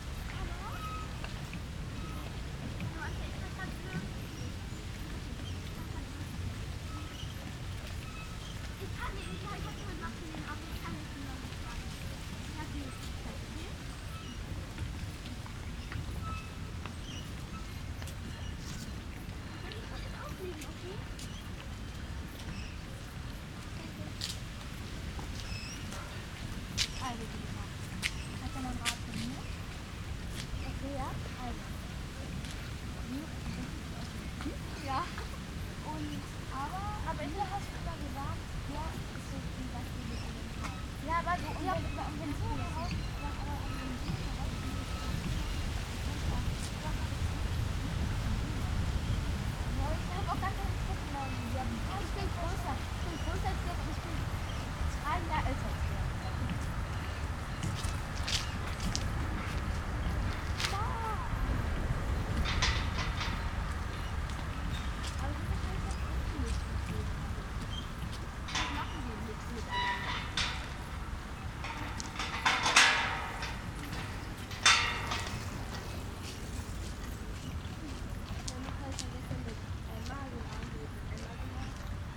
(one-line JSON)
{"title": "Gränertstraße, Kirchmöser, Brandenburg an der Havel - lake side evening ambience", "date": "2022-08-31 20:30:00", "description": "Kirchmöser Dorf, evening ambience at the lake, nothing much happens, wind in riggings, voices from nearby restaurant, cyclists, pedestrians, kids\n(Sony PCM D50, Primo EM172)", "latitude": "52.37", "longitude": "12.43", "altitude": "34", "timezone": "Europe/Berlin"}